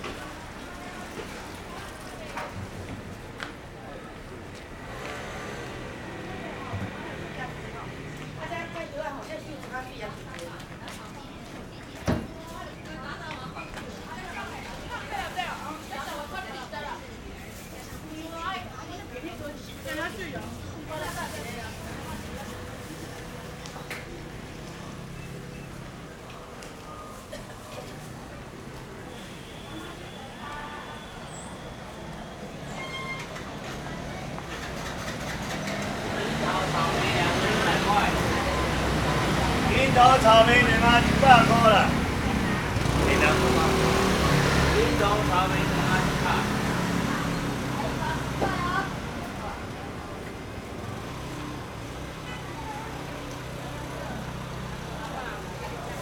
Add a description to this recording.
Walking through the traditional market, Zoom H4n +Rode NT4